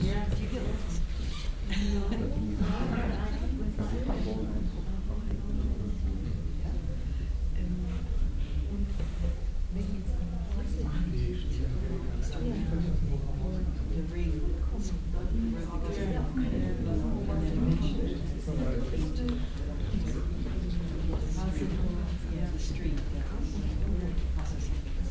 {"title": "osnabrück, lagerhalle, kino vor film", "description": "publikum vor film vorführung im rahmen der emaf 2008\nproject: social ambiences/ listen to the people - in & outdoor nearfield recordings", "latitude": "52.28", "longitude": "8.04", "altitude": "67", "timezone": "GMT+1"}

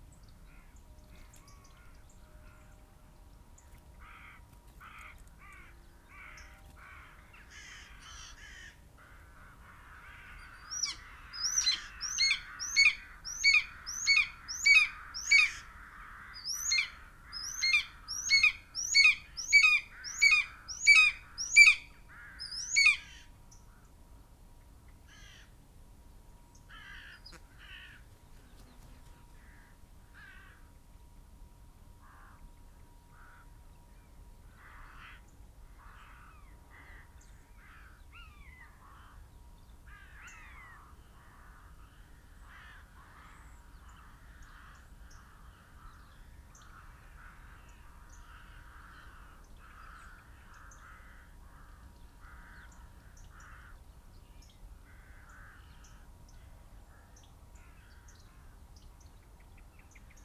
{"title": "Luttons, UK - corvids and raptors soundscape ...", "date": "2016-12-18 08:30:00", "description": "Corvids and raptors soundscape ... bird calls ... buzzard ... peregrine ... crow ... rook ... yellowhammer ... skylark ... blackbird ... open phantom powered lavalier mics clipped to hedgerow ... background noise ...", "latitude": "54.12", "longitude": "-0.56", "altitude": "92", "timezone": "Europe/London"}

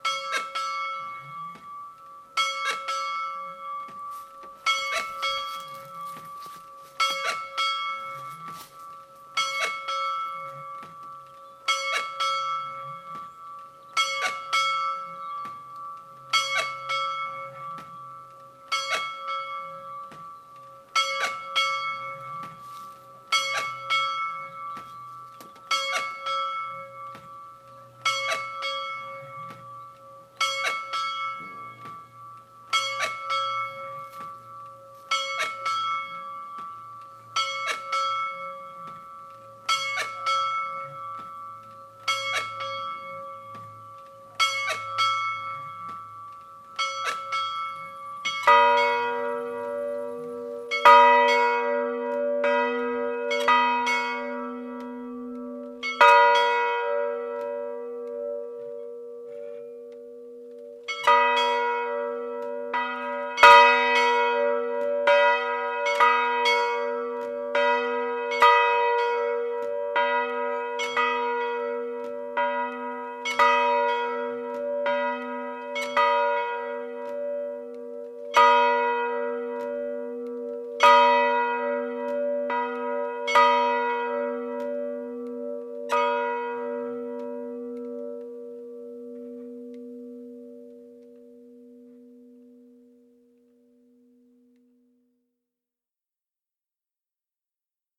{"title": "Sent. de L’Église, Beaumont-en-Diois, France - Beaumont-en-Diois - Drôme", "date": "2001-12-17 14:00:00", "description": "Beaumont-en-Diois - Drôme\nCloche de l'église - volée manuelle", "latitude": "44.57", "longitude": "5.48", "altitude": "675", "timezone": "Europe/Paris"}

